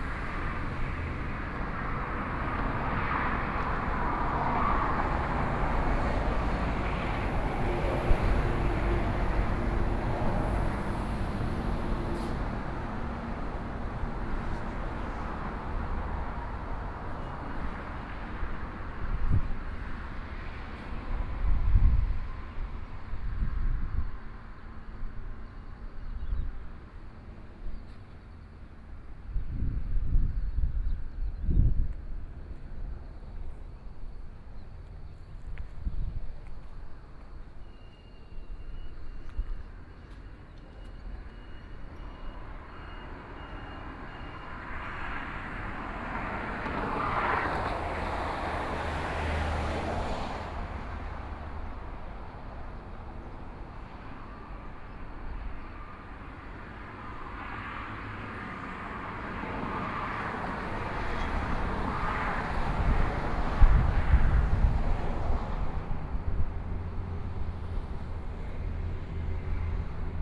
Below the freeway lanes, Traffic Sound
Sony PCM D50+ Soundman OKM II